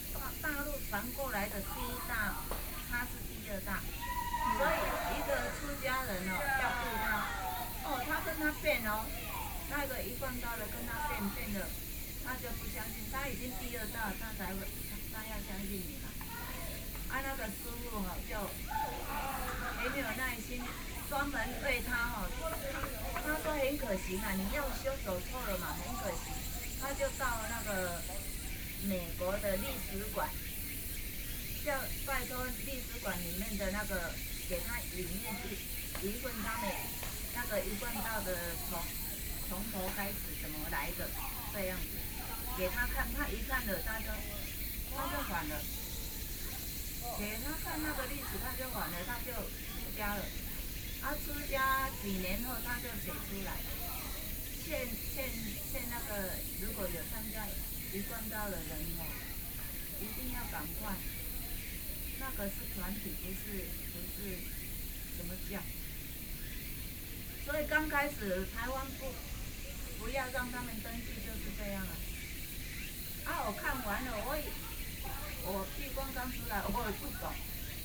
Two women are sharing religion, There are people playing badminton nearby, Birds, Sony PCM D50 + Soundman OKM II